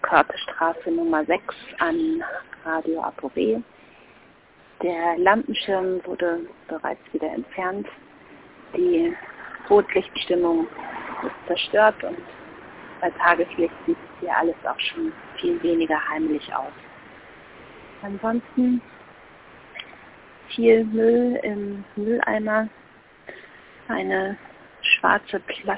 Fernsprecher Körtestraße 6 - Rotlicht entfernt 27.08.2007 15:48:39